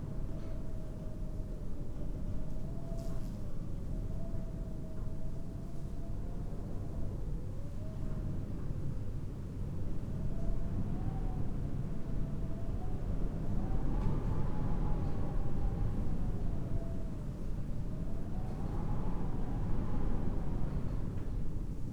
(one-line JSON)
{"title": "St. Thomas Oldridge Chapel, Oldridge Rd, United Kingdom - wind at the altar", "date": "2018-04-03 14:08:00", "description": "St. Thomas Oldridge Chapel near Whitestone recorded to Olympus LS 14 via a pair of Brady omni (Primo) mics spaced on a coathanger on the altar facing into the main nave. Typical spring day, sunshine, rain, breezy. Recorded at about 2.15 pm", "latitude": "50.75", "longitude": "-3.66", "altitude": "158", "timezone": "Europe/London"}